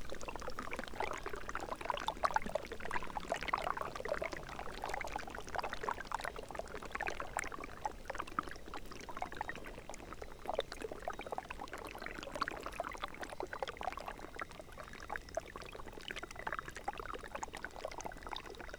해빙강 thawing rivulet
...ice melt flows beneath a frozen rivulet